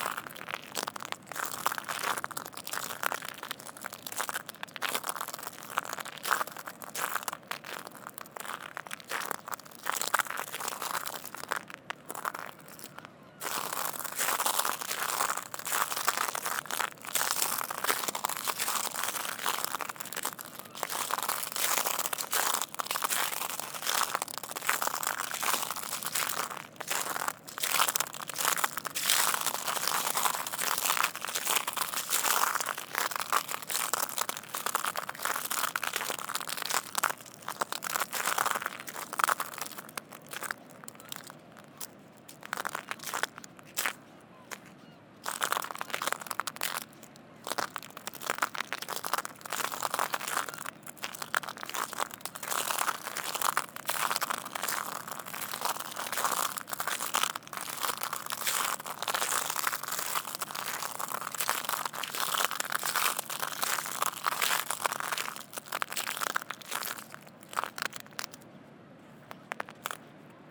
IJmuiden, Nederlands - Walking on shells
Walking on shells. It's an accumulation of Solen. It's the particularity of the Zuid-Holland beaches.